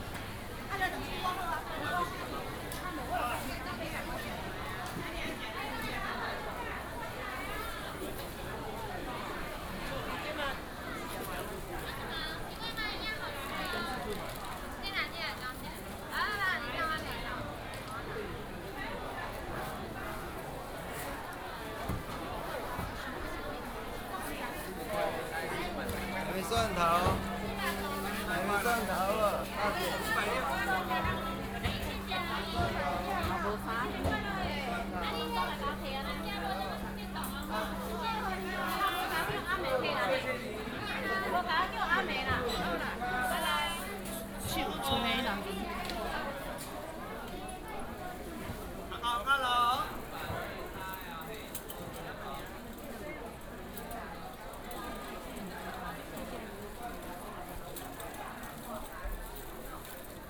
豐原第一零售市場, Fengyuan Dist., Taichung City - Very large indoor market
Very large indoor market, Market cries